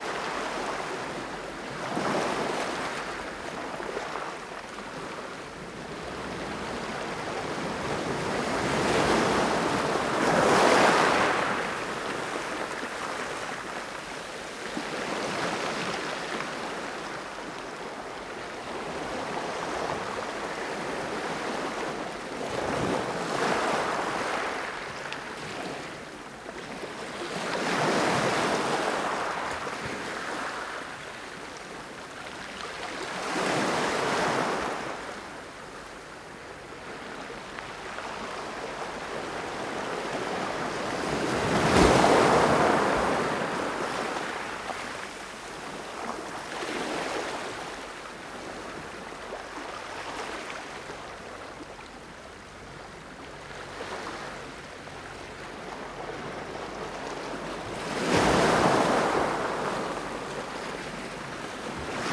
Rue du Port Goret, Tréveneuc, France - AMB EXT JOUR vagues plage galets
Ambiance de vagues s'échouant sur une plage de galets. Enregistré avec un couple ORTF de Sennheiser MKH40 coiffés de Rycote Baby Ball Windjammer et une Sound Devices MixPre3.
21 April